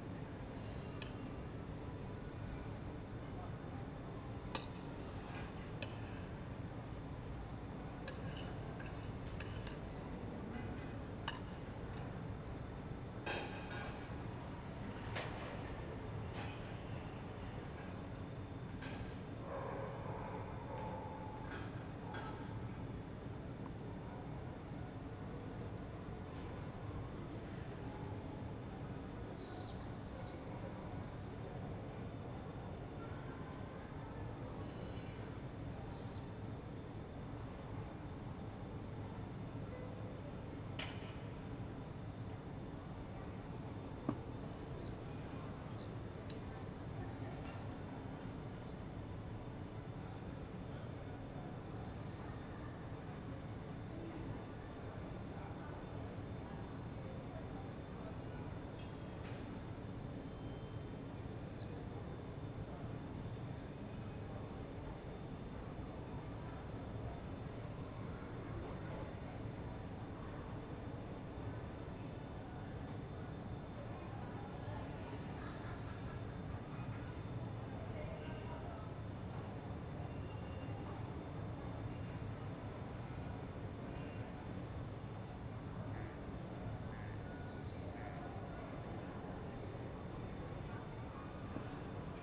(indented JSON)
{
  "date": "2021-06-28 21:12:00",
  "description": "\"Terrace at sunset with swallows and guitar recording in the background in the time of COVID19\" Soundscape\nChapter CLXXVII of Ascolto il tuo cuore, città. I listen to your heart, city\nMonday June 28th 2021. Fixed position on an internal terrace at San Salvario district Turin, More than one year and three months after emergency disposition due to the epidemic of COVID19.\nStart at 9:12 p.m. end at 9:24 p.m. duration of recording 13'36'', sunset time at 09:20.",
  "latitude": "45.06",
  "longitude": "7.69",
  "altitude": "245",
  "timezone": "Europe/Rome"
}